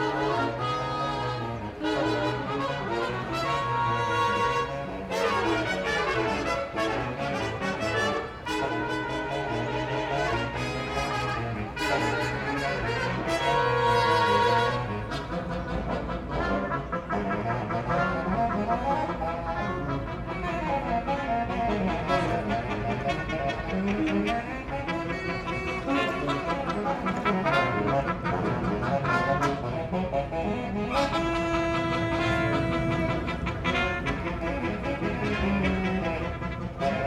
Ryan Quigley, Paul Towndrow, Konrad Wiszniewski, Allon Beauvoisin
Tallinn, Estonia, April 2011